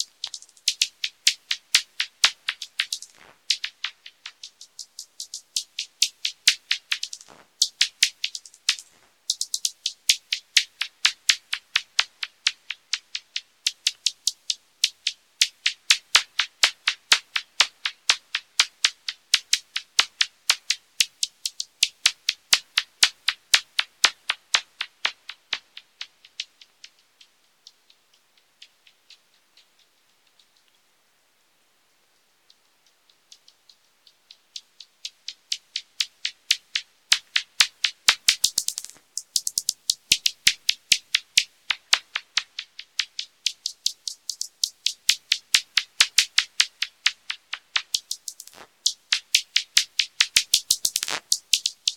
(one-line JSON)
{"title": "Utena, Lithuania, bats echolocating", "date": "2019-04-18 22:10:00", "description": "bats echolocating in the park of the town", "latitude": "55.51", "longitude": "25.59", "altitude": "106", "timezone": "Europe/Vilnius"}